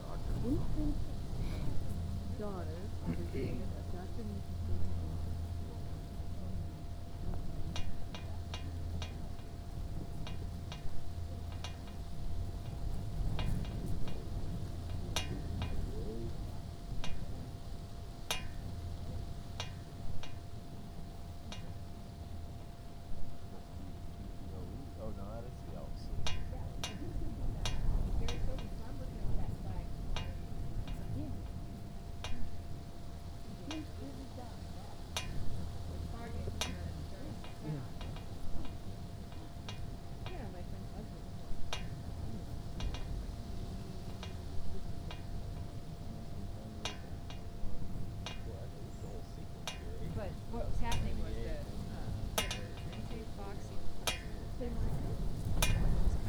{"title": "neoscenes: Victor Cemetery with flagpole", "date": "2011-09-03 15:59:00", "latitude": "38.70", "longitude": "-105.16", "altitude": "2881", "timezone": "America/Denver"}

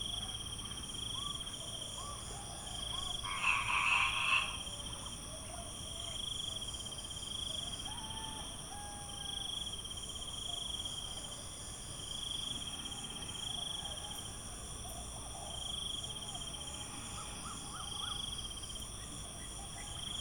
{"title": "Unnamed Road, Tambon Wiang Tai, Amphoe Pai, Chang Wat Mae Hong Son, Thailand - Morgenatmo Pai", "date": "2017-08-26 06:15:00", "description": "Early morning atmosphere at a resort in Pai. Crickets, birds and all kinds of sizzling animals, but also some traffic going by in a distance. Slow and relaxing, though not out of the world.", "latitude": "19.35", "longitude": "98.43", "altitude": "556", "timezone": "Asia/Bangkok"}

